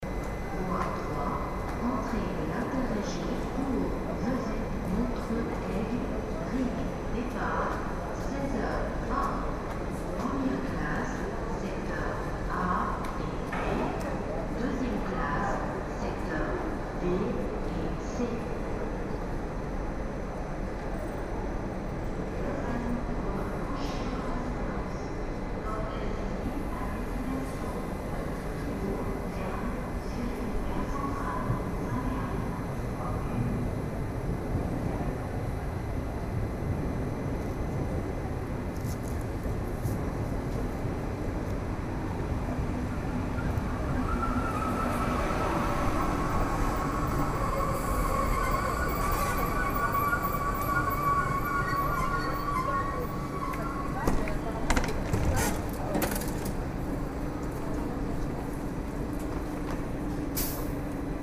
Train departure/arrival annoucement, said by the pre-recorded official voice of the SBB (Swiss national railway company) in the French-speaking part of Switzerland.